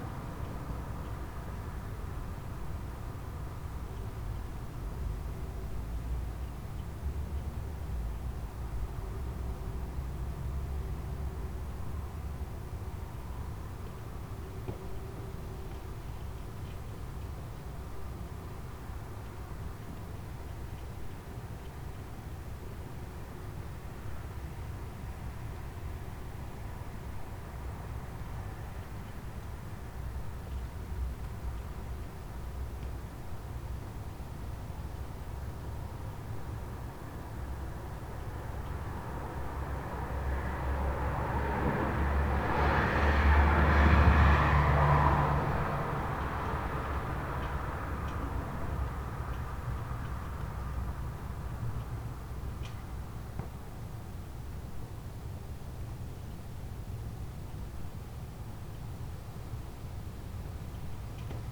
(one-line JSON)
{"title": "wermelskirchen, berliner straße: terrasse - the city, the country & me: flagstaffs in the wind", "date": "2011-06-18 01:00:00", "description": "wind-whipped ropes of flagstaffs, night traffic\nthe city, the country & me: june 18, 2011", "latitude": "51.14", "longitude": "7.23", "altitude": "312", "timezone": "Europe/Berlin"}